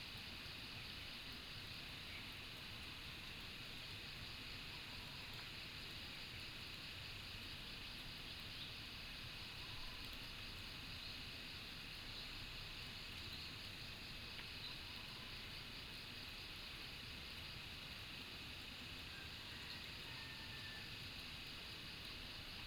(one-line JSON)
{"title": "茅埔坑溪生態公園, Nantou County - Early morning", "date": "2015-04-30 06:00:00", "description": "Early morning, Crowing sounds, Bird calls", "latitude": "23.94", "longitude": "120.94", "altitude": "470", "timezone": "Asia/Taipei"}